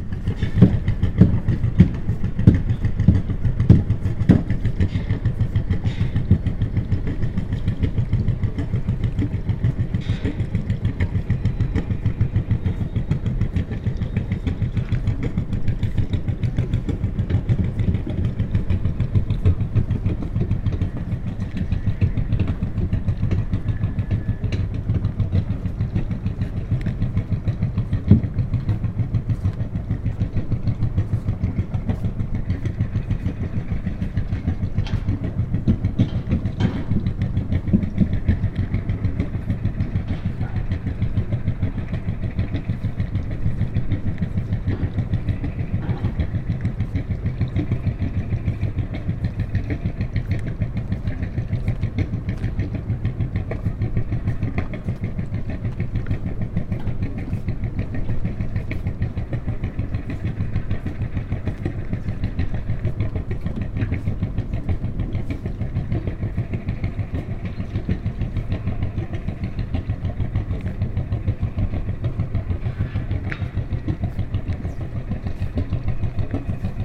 diver doing something like chopping on a small, but loud, rattling boat, construction work near by